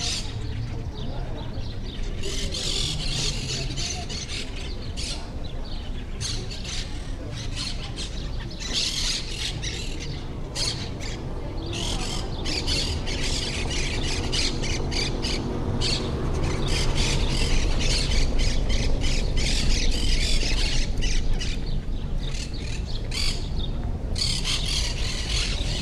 Barrio Histórico, Colonia del Sacramento, Uruguay - Plaza mayor
Plaza mayor, muchas cotorras y algun auto que pasa. cerca de un restaurante done la gente come